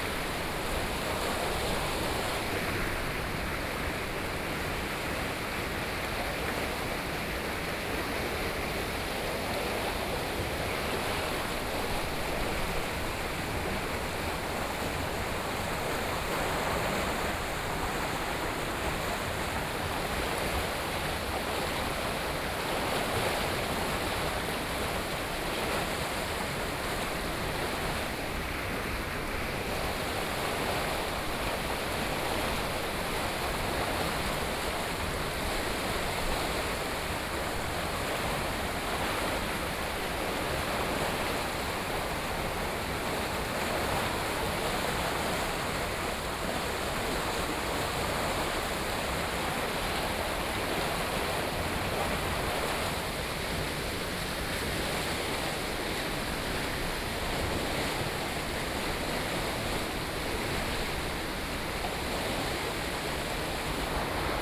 Hebin Rd., Luodong Township - Irrigation channel
Irrigation channel, Hot weather, The sound of water
Sony PCM D50+ Soundman OKM II